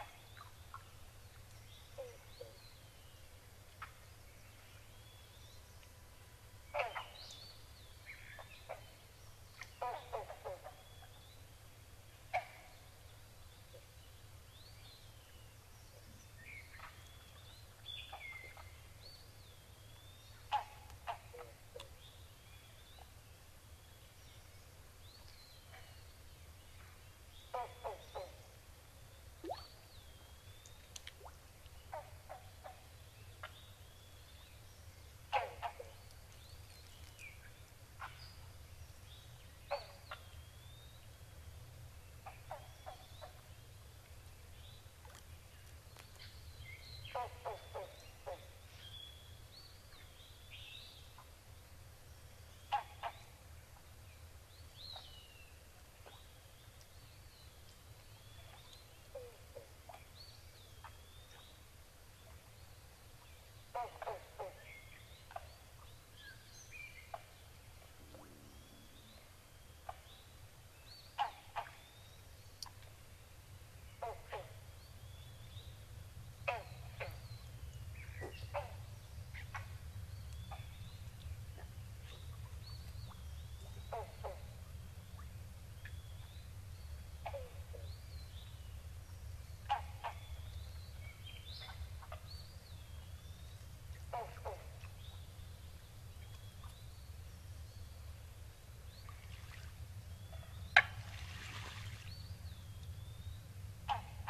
Wharton State Forest, NJ, USA - Bogs of Friendship, Part Three
Dawn settles in along a quiet bog in Friendship.
Chatsworth, NJ, USA, 30 July 2007